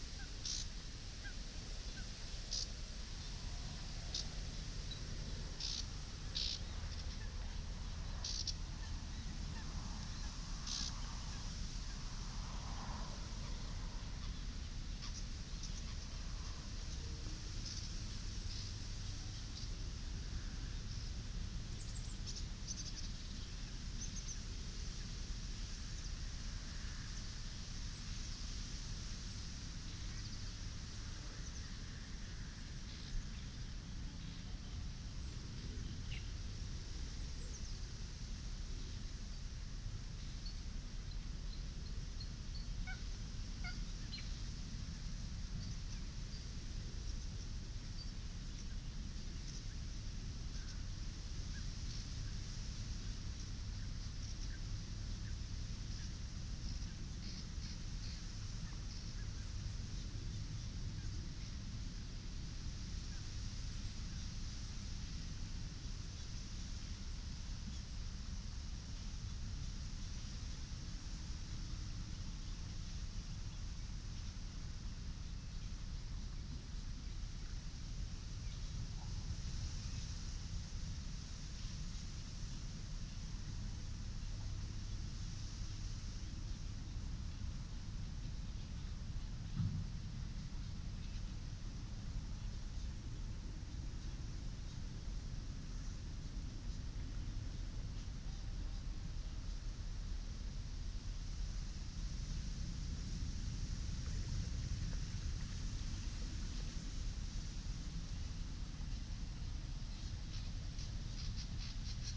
Werkendam, Nederland - Jantjesplaat (De Biesbosch)
Recorded on a windy path among the reeds in National Park De Biesbosch. Check Aporee for the exact location.
Binaural recording.